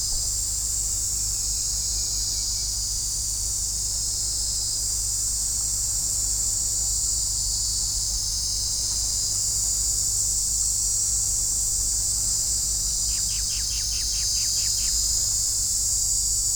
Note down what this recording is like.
Koh Samui, Mae Nam beach, Grasshopers. Plage de Mae Nam à Koh Samui, les criquets.